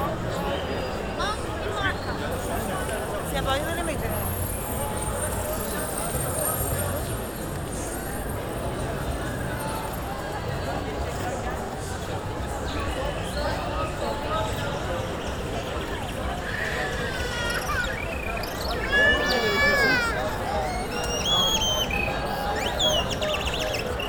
Istanbul, Beyoglu - Nightlife - Streetwalk at night
Hasnun Galip Sokak, Beyoğlu/Istanbul Province, Turkey, 8 August, 22:30